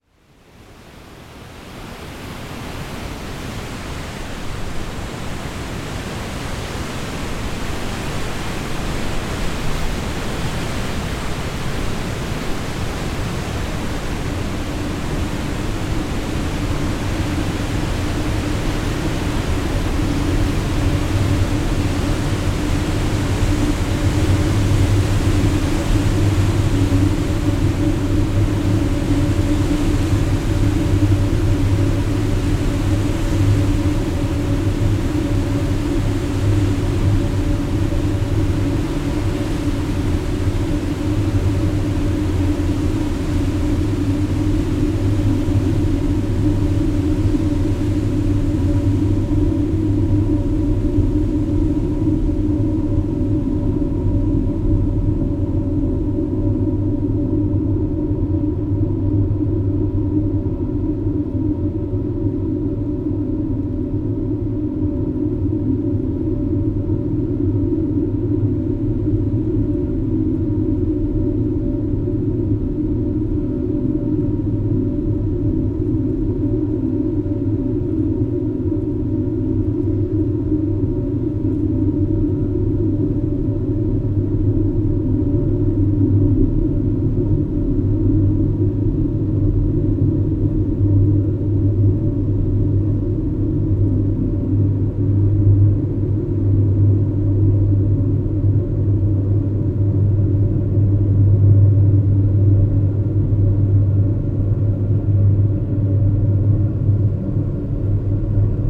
Hofstrasse, Birsfelden, Schweiz - Kraftwerk Birsfelden
inside a hydro-electric powerplant
Take a dive down to the turbines and listen to the rotation from the inside. The noise is transmitted directly through the building's concrete structure up to the iron handrail of the pedestrian bridge, where the sound is picked up with the modified magnetic pickup from a bass guitar.
Recorded on a Zoom H6 with added sound from the MS microphone.